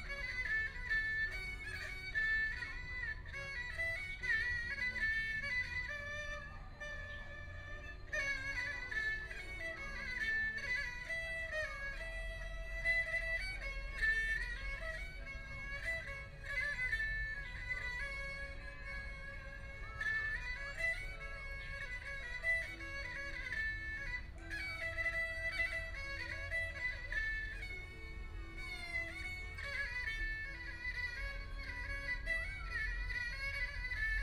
An old man, Pull the erhu, Binaural recording, Zoom H6+ Soundman OKM II